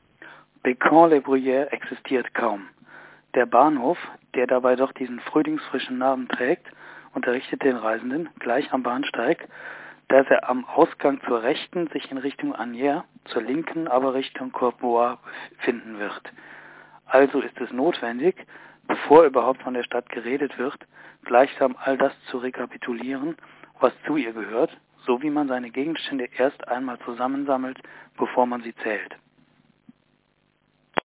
Bécon-les-Bruyères - Bécon-les-Bruyères, Emmanuel Bove 1927